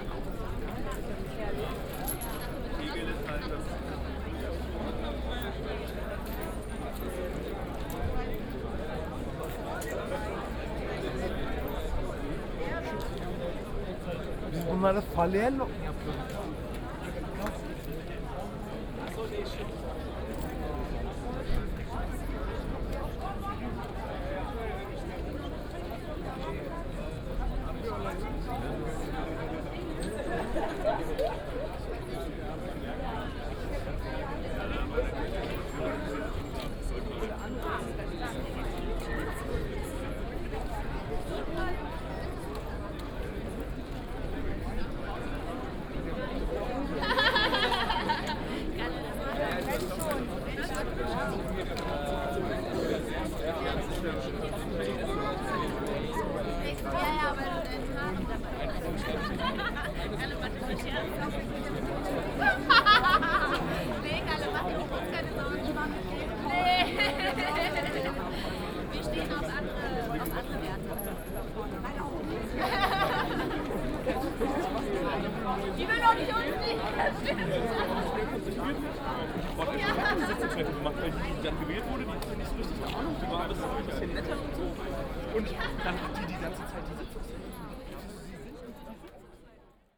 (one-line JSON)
{"title": "kottbusser damm, schönleinstr. - demonstration, street blockade", "date": "2013-04-13 18:40:00", "description": "a demonstration against gentrification in this area blocked this usually very noisy street, almost no traffic audible. some tension between police and demonstrators is present.\n(Sony PCM D50, OKM2 binaural)", "latitude": "52.49", "longitude": "13.42", "altitude": "40", "timezone": "Europe/Berlin"}